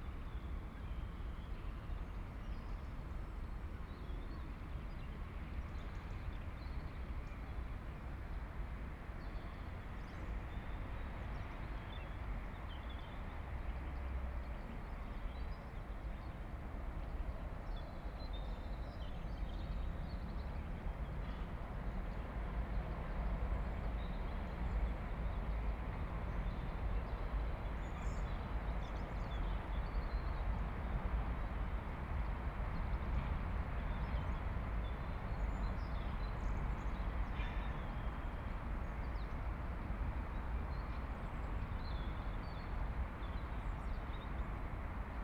Ascolto il tuo cuore, città. I listen to your heart, city. Chapter X - Valentino Park at sunset soundwalk and soundscape in the time of COVID19: soundwalk & soundscape
Monday March 16th 2020. San Salvario district Turin, to Valentino park and back, six days after emergency disposition due to the epidemic of COVID19.
Start at 6:17 p.m. end at 7:20 p.m. duration of recording 1h'03’00”
Walking to a bench on riverside where I stayed for about 10’, from 6:35 to 6:45 waiting for sunset at 6:39.
The entire path is associated with a synchronized GPS track recorded in the (kmz, kml, gpx) files downloadable here: